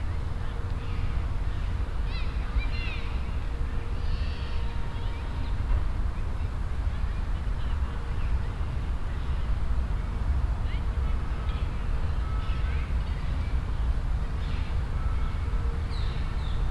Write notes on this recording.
stereofeldaufnahmen im september 07 mittags, project: klang raum garten/ sound in public spaces - in & outdoor nearfield recordings